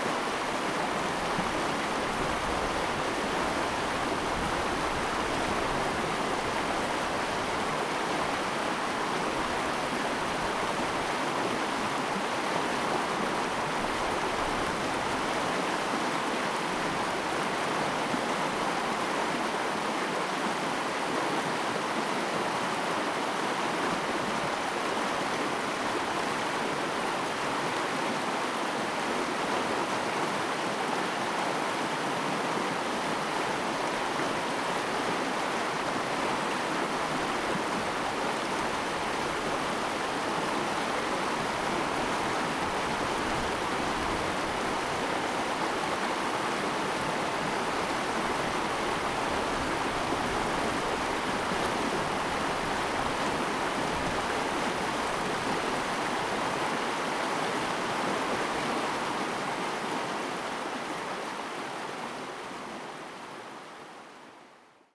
In the river bed, traffic sound, Stream sound
Zoom H2n MS+XY